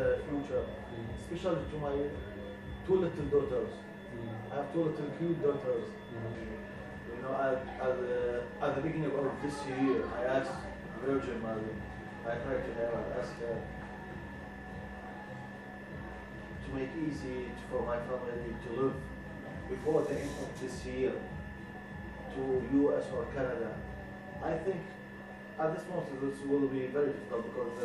:jaramanah: :nashwang about unhcr and general support for iraqi refugees: - twentyfive